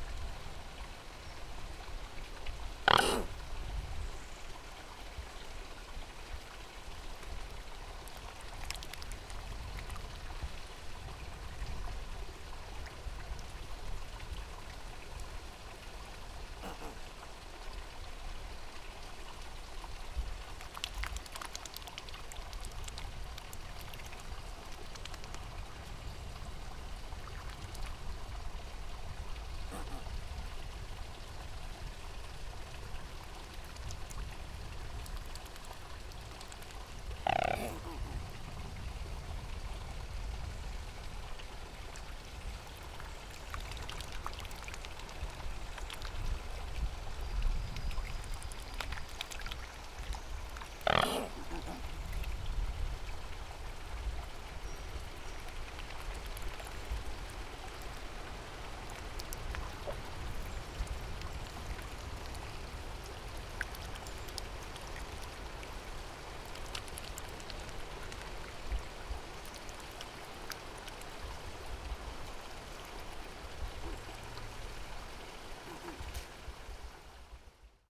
Sintra, gardens around Palácio da Pena - white swans
two white swans snorting and grunting. each making a different sound. splashing about a bit. they were rather perplexed by the presence of the recorder.